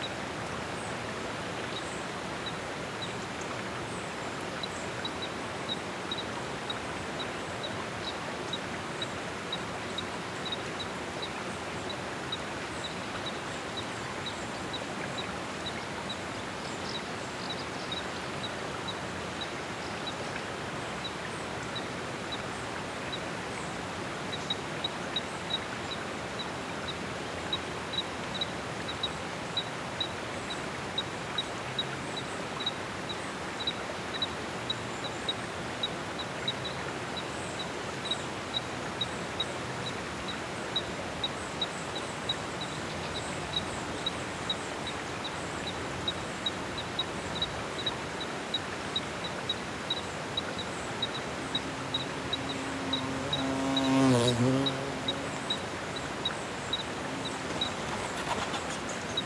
SBG, Gorg Negre, Obaga del Pujol - Al borde de la ladera
Paisaje en calma en este enclave de la Riera del Sorreigs. Aves e insectos, suaves ráfagas de viento y el rumor contínuo del torrente al fondo del barranco.
Sobremunt, Spain, 17 July 2011